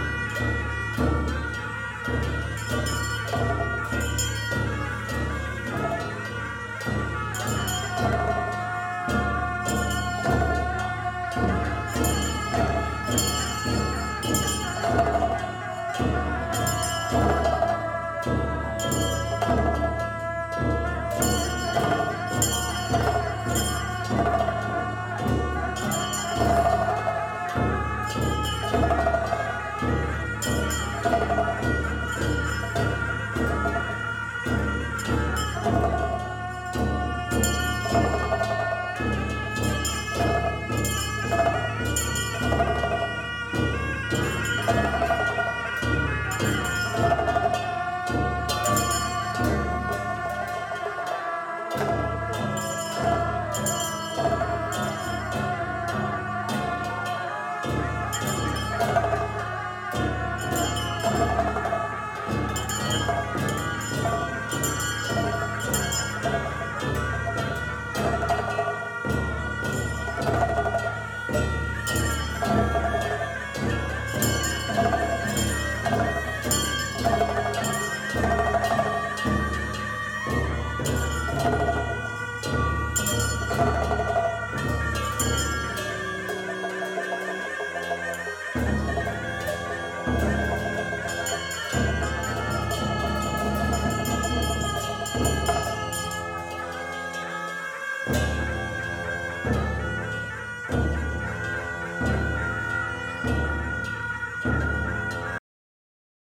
Mang gi Rimdro(puja), Dho Jaga Lama, Phaduna DSP center, Choki Yoezer, M-5